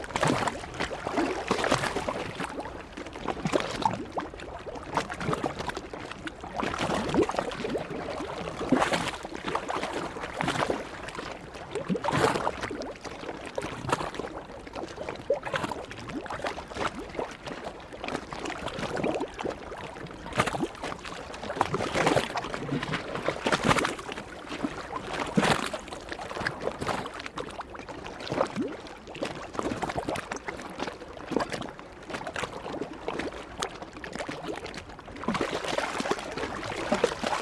waves of Nida, water on breaker #2
waves of Nida water sounds